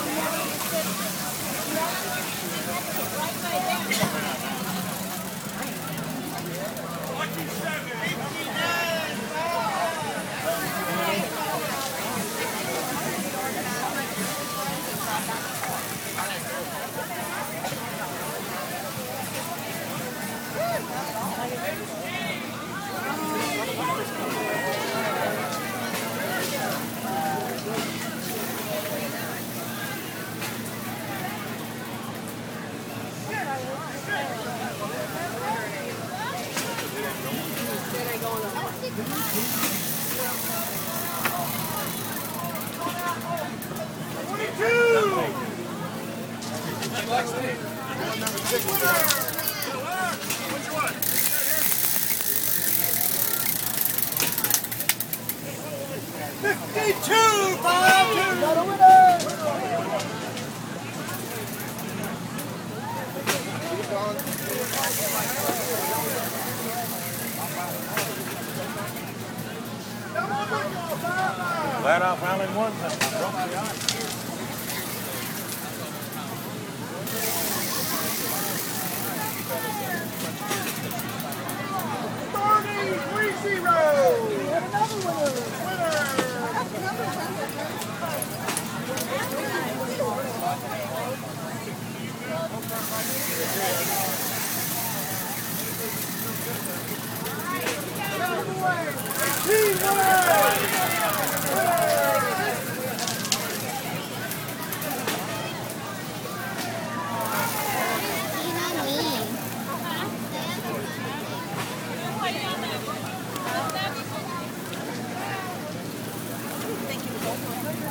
Crescent Hill, Louisville, KY, USA - In the end, everyone's a loser.
Games at a church picnic.
Recorded on a Zoom H4n.